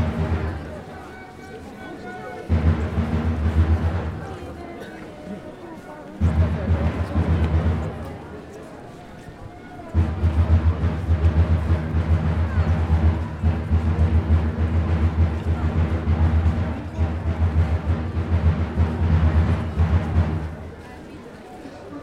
Strada Republicii, Brașov, Romania - 2016 Christmas in Brasov - Drums and Bears
There is an old tradition on Christmas in Romania where in rural villages young people would dress as bears and do a ritual most probably of pagan origins, going from house to house doing chants and rhythms. Nowadays, in cities there are people who only pretend to re-enact this ritual, dressing with poor imitations and very low musical sense, if any. They beat some makeshift drums with the same rhythm, say some rhymes that don't have much sense but most importantly expect passers-by to throw them money for the "show". You can hear the drums getting louder as they slowly approach from the side. Recorded with Superlux S502 Stereo ORTF mic and a Zoom F8 recorder.
25 December 2020, ~18:00